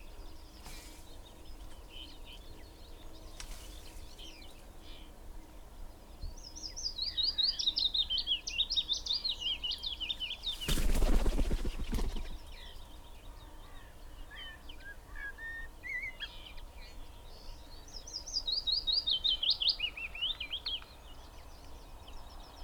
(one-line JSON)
{"title": "Green Ln, Malton, UK - willow warbler ... wood pigeon ...", "date": "2020-05-30 05:51:00", "description": "Willow warbler ... wood pigeon ... dpa 4060s to Zoom F6 ... lavaliers clipped to twigs ... bird calls ... song ... from ... yellowhammer ... pheasant ... wren ... skylark .. goldfinch ... magpie ... crow ...", "latitude": "54.13", "longitude": "-0.55", "altitude": "96", "timezone": "Europe/London"}